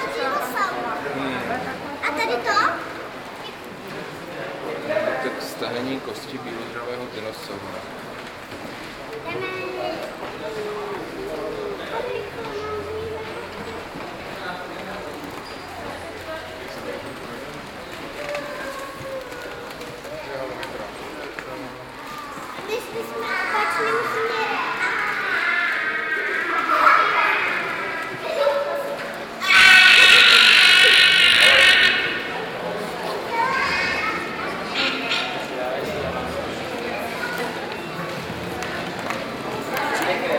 National Museum, last visit
last day of the exhibitions in the old building of the national museum before 5 years of closing due to the general reconstruction
July 2011